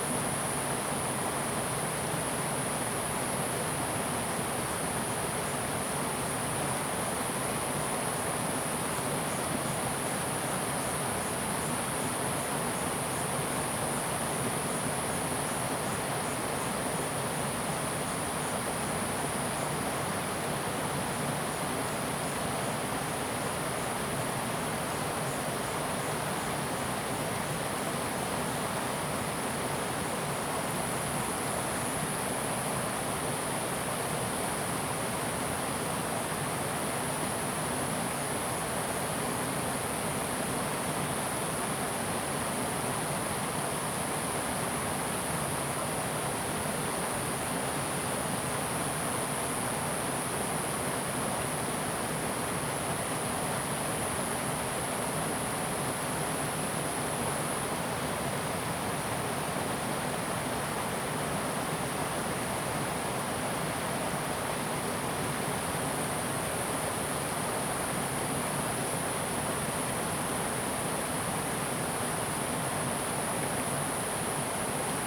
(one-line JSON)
{"title": "種瓜坑溪, 埔里鎮 Nantou County - River and Insect sounds", "date": "2016-06-07 11:14:00", "description": "Sound of water, Insect sounds, River, In the center of the river\nZoom H2n MS+XY", "latitude": "23.94", "longitude": "120.90", "altitude": "522", "timezone": "Asia/Taipei"}